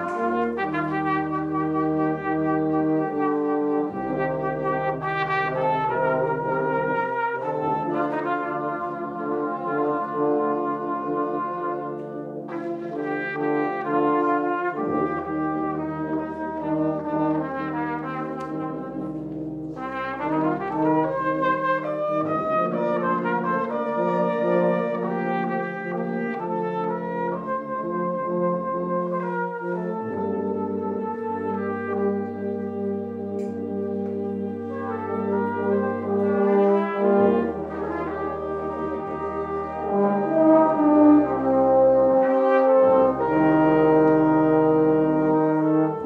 Mellbecks, Kirkby Stephen, UK - Band Practice
Kirkby Stephen Brass Band plays The Concierto de Aranjuez by Joaquín Rodrigo. This rehearsal wasn't the full band but has a lovely cornet solo.
North West England, England, United Kingdom